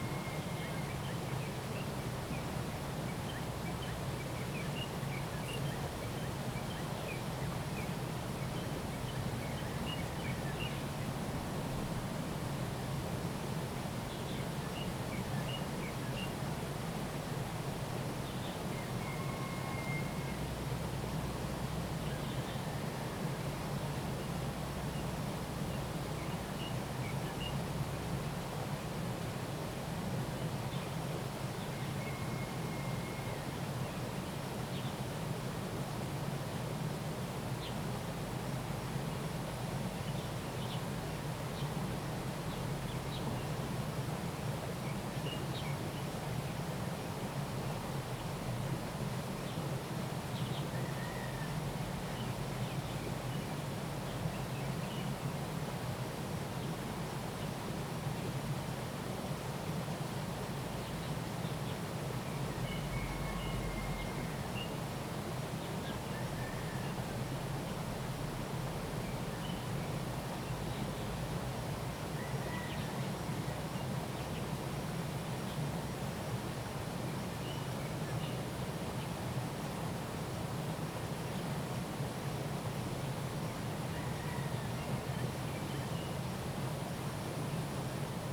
The sound of water, Bird calls, Bird and Stream, Chicken calls
Zoom H2n MS+XY